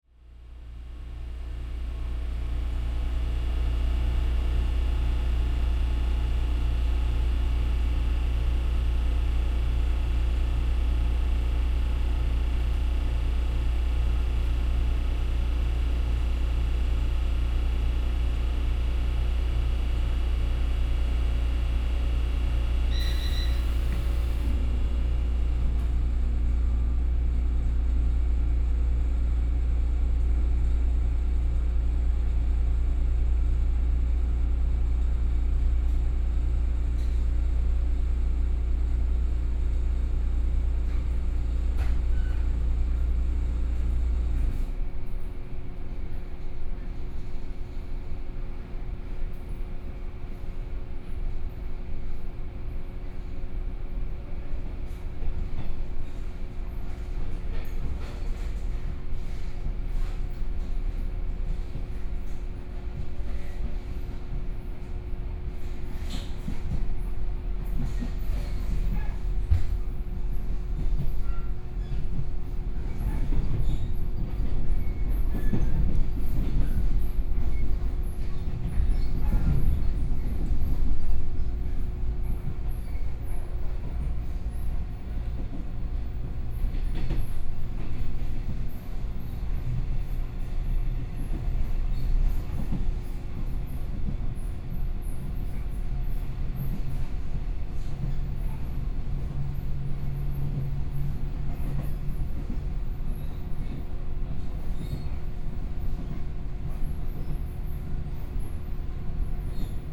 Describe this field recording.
from Guishan Station to Daxi Station, Binaural recordings, Zoom H4n+ Soundman OKM II